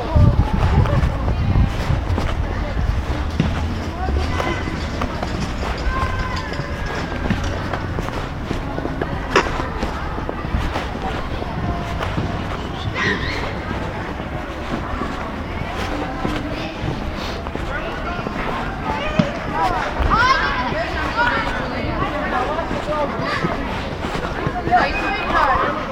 [IIIV+tdr] - Osnovna škola Jelena Ćetković
Belgrade, Serbia, 17 November